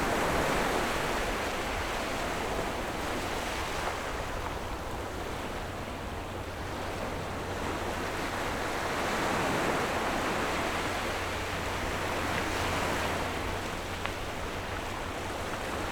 珠螺灣, Nangan Township - At the beach
At the beach, Tide, Sound of the waves
Zoom H6 +RODE NT4